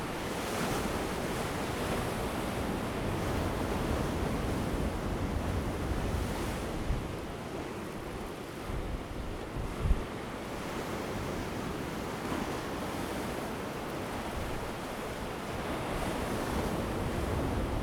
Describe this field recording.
In the wind Dibian, Sound of the waves, Very hot weather, Zoom H2n MS+ XY